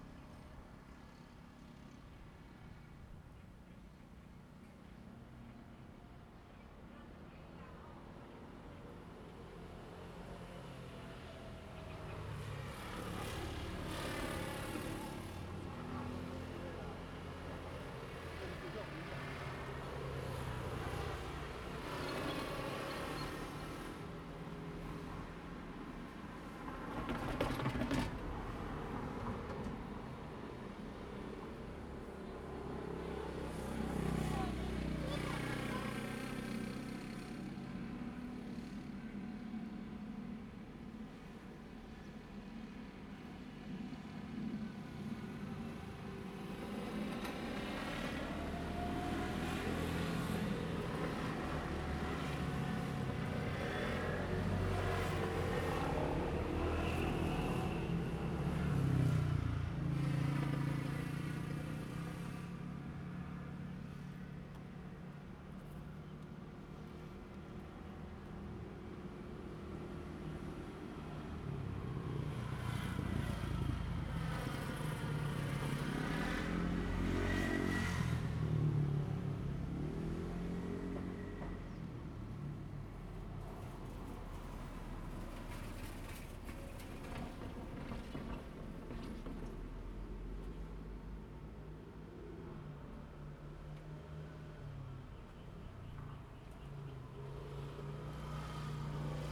Mingde St., 花壇鄉 - in the railroad crossing
in the railroad crossing, Bird call, Traffic sound, The train passes by
Zoom H2n MS+ XY
Changhua County, Taiwan, 18 March